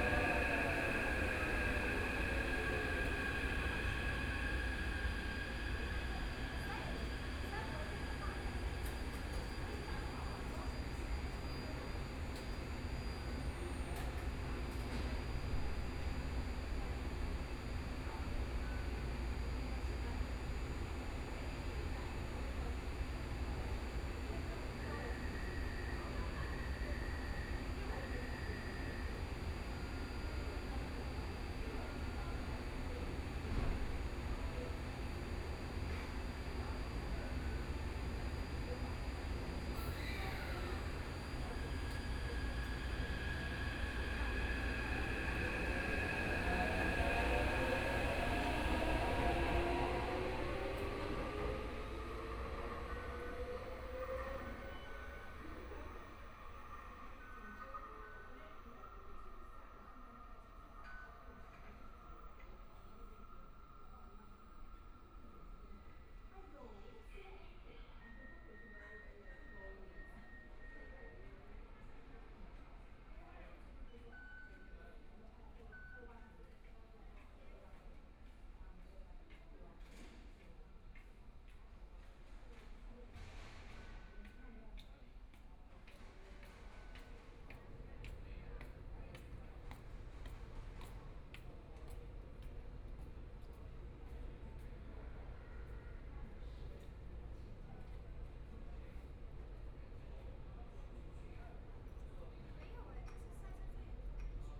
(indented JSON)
{
  "title": "Fuxinggang Station, Taipei - On the platform",
  "date": "2014-02-16 15:34:00",
  "description": "In the MRT station platform, Waiting for the train\nBinaural recordings, ( Proposal to turn up the volume )\nZoom H4n+ Soundman OKM II",
  "latitude": "25.14",
  "longitude": "121.49",
  "altitude": "10",
  "timezone": "Asia/Taipei"
}